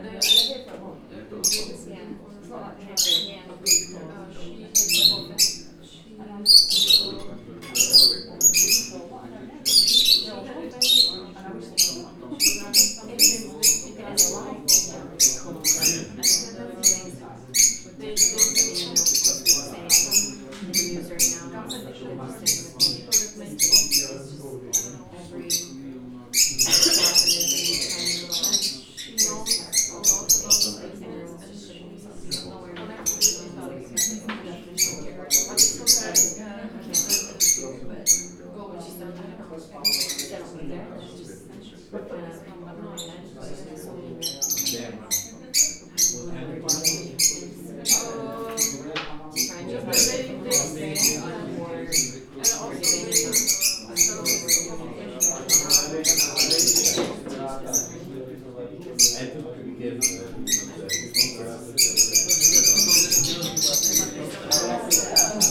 Early evening in Unijazz cafe. Parrots just after some passionate quarrel. Otherwise they rather tolerate guests of the cafe. Cafe is run by Unijazz the cultural organization. They publish the UNI - a monthly magazine tributed to music. It is oriented mostly on young more sofisticated readers. Unijazz cafe is very nice, calm, cultural place in the centre of Prague